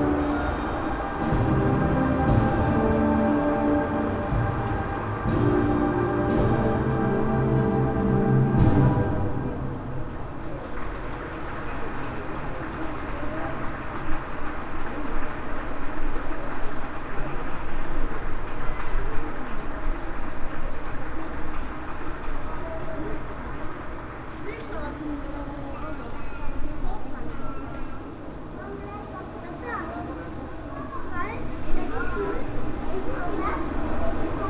park window - brass band, winds, church bells ...